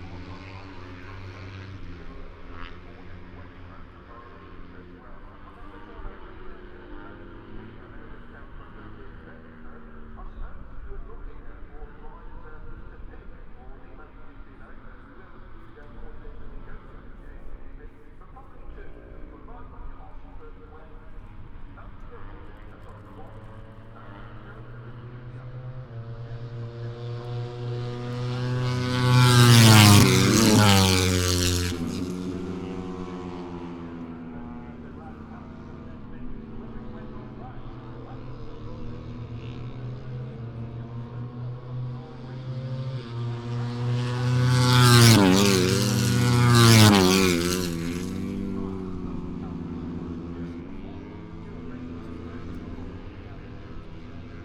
British Motorcycle Grand Prix 2018 ... moto one ... free practice three ... maggotts ... lavalier mics clipped to sandwich box ...